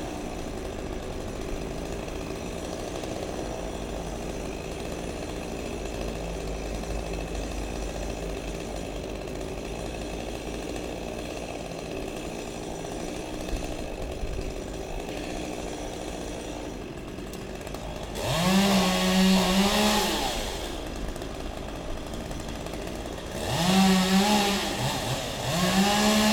Birds in centre The Hague - Cutting down a tree
Cutting down a tree in a densely built place; branch by branch, from top to bottom. This particularly tree was sick and treating to fall on a daycare center.
This sound really annoys me every time.
Den Haag, The Netherlands, 12 February 2015, 15:58